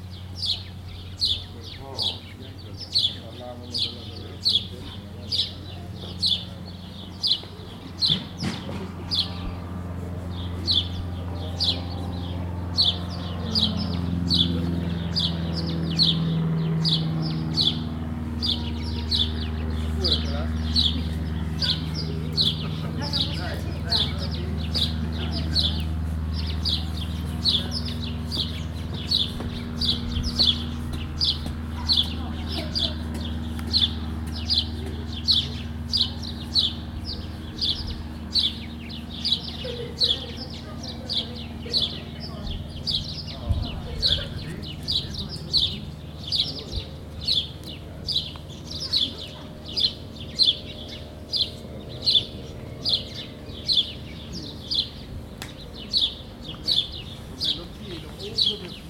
Fiorenzuola di Focara PU, Italia - Domenica pomeriggio
Seduti a riposare nel parco vicino alla chiesetta di Fiorenzuola di Focara una domenica pomeriggio. Ho usato uno Zoom H2n